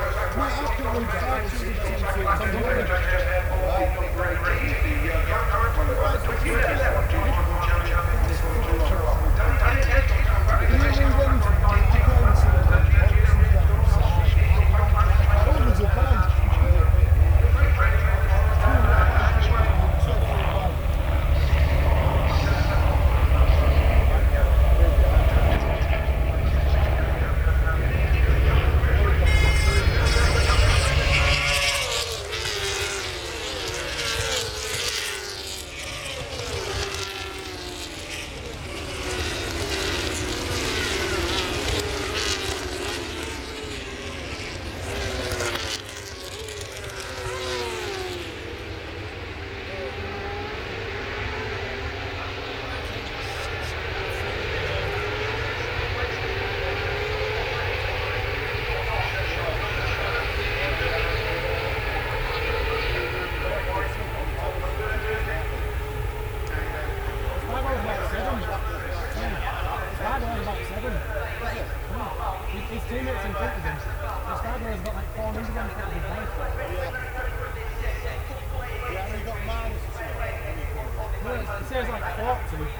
Lillingstone Dayrell with Luffield Abbey, UK - british motorcycle grand prix 2013 ...
moto2 race 2013 ... lavalier mics ...